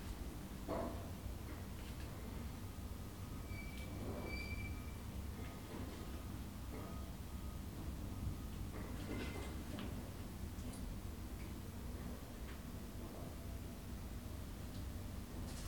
Unit, Old Sarum Park, Salisbury, UK - 020 Thinks happening inside and outside an industrial unit